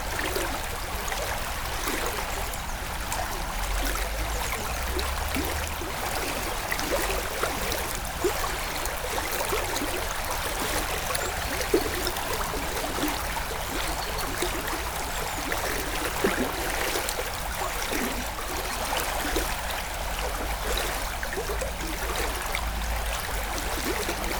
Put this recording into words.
The small Voise river in the quiet village of Houx.